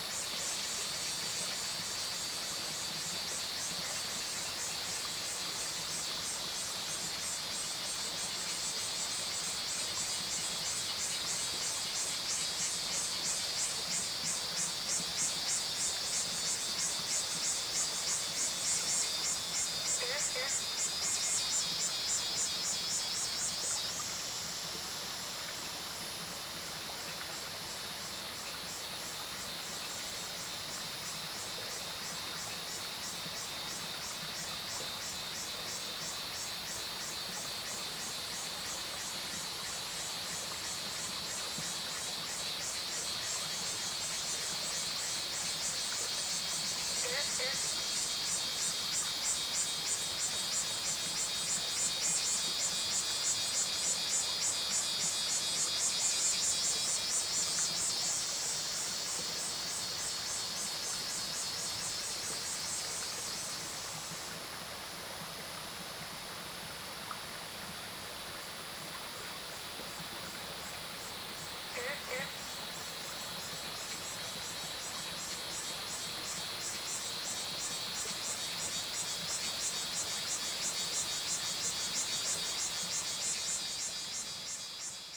頂草楠, 桃米里Puli Township - Cicadas called
Cicadas called, Stream sound, Frogs called
Zoom H2n MS+XY
Nantou County, Taiwan